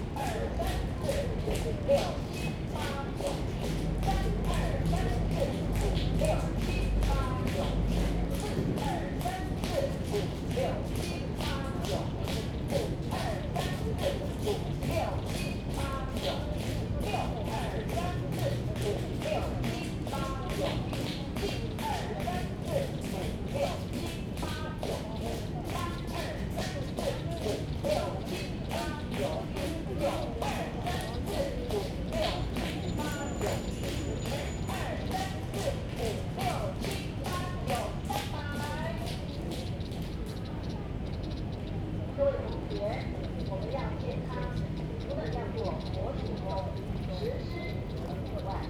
in the Park, Beat the foot, Many elderly people do aerobics
Zoom H2n MS+XY
North District, Tainan City, Taiwan, 18 February 2017